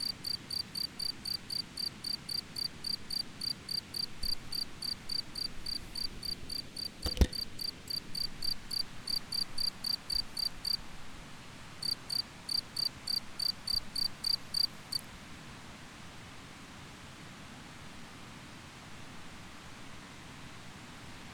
recherche de grillons.... Looking for crickets
Montpezat-sous-Bauzon, France